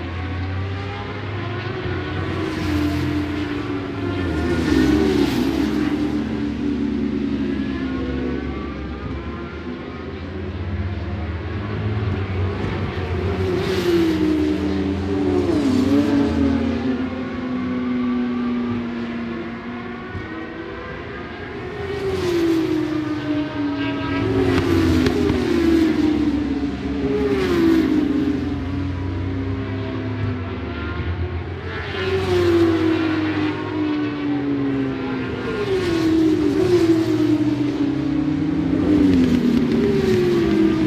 Scratchers Ln, West Kingsdown, Longfield, UK - British Superbikes 2005 ... 600 ...
British Superbikes 2005 ... 600 free practice one (contd) ... one point stereo mic to minidisk ...
26 March